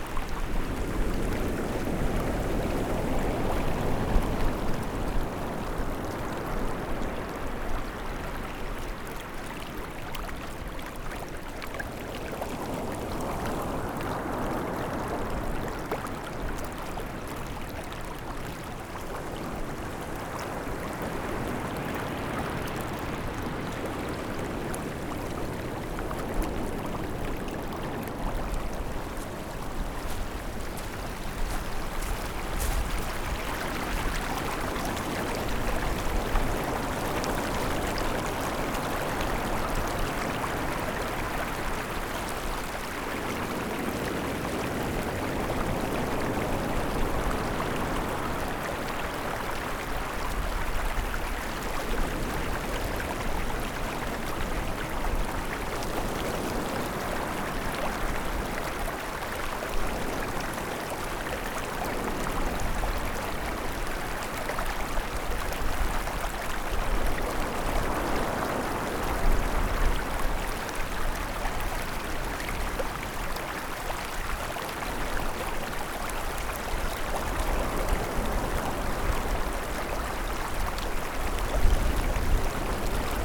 Zhiben, Taitung City - The sound of water
Sound of the waves, The sound of water, Zoom H6 M/S